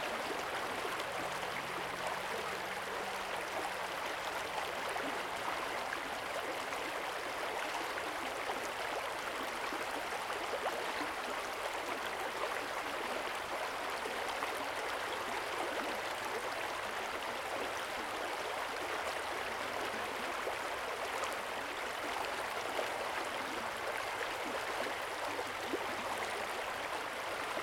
Keifer Creek, Ballwin, Missouri, USA - Keifer Creek Riffle
Recording of a series of riffles in Keifer Creek. Also spelled Kiefer. Named for the Keefer family. It all sounds the same to us down in these hollers.